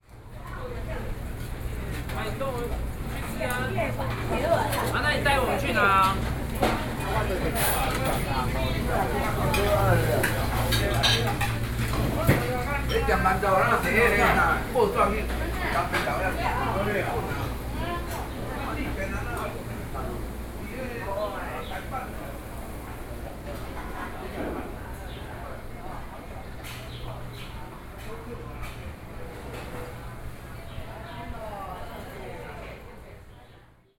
Ln., Guangzhou St., 萬華區, Taipei City - Traditional markets